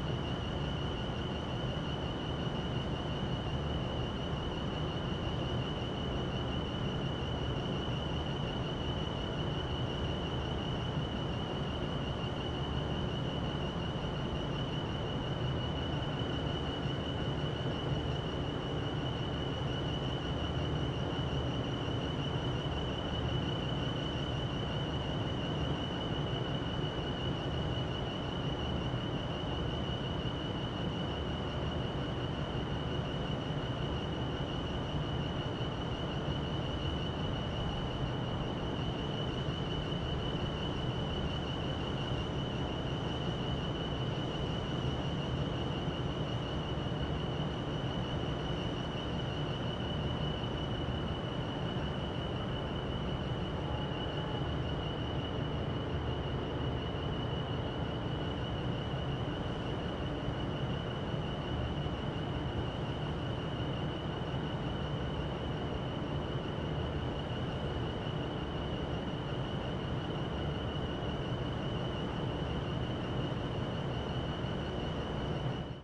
Humming vents captured outside on a cool, grey winter day. Some wind but not much in this nook. Recorded using Tascam DR-08 recorder.
ON, Canada, 28 December 2016